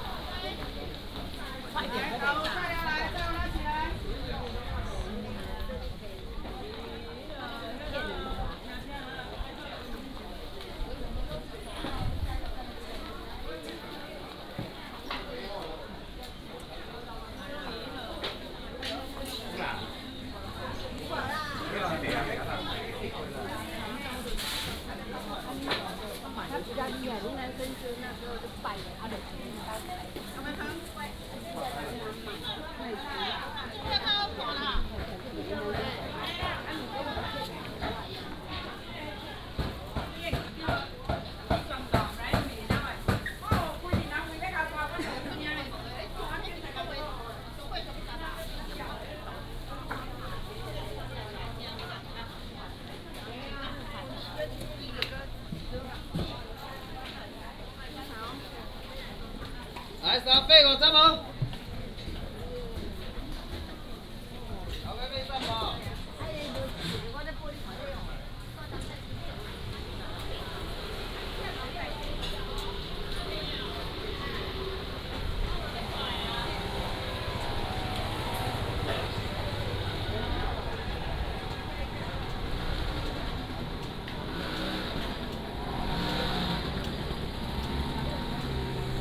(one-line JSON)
{"title": "台南東門市場, Tainan City - Walking in the traditional market", "date": "2017-02-18 09:39:00", "description": "Walking in the traditional market", "latitude": "22.99", "longitude": "120.21", "altitude": "26", "timezone": "GMT+1"}